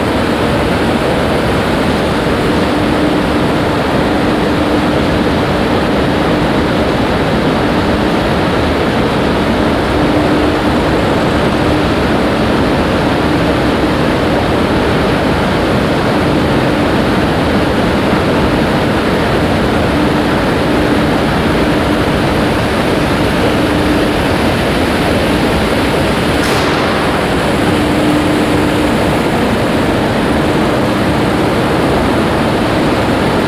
Bockenheim, Frankfurt am Main, Deutschland - frankfurt, fair, hall 9, moving staircases
Inside the fair hall 9. The sound of a big 4 level high double moving staircase rolling empty in the early morning hours.
soundmap d - topographic field recordings and social ambiences
Germany, 23 March 2012, 07:15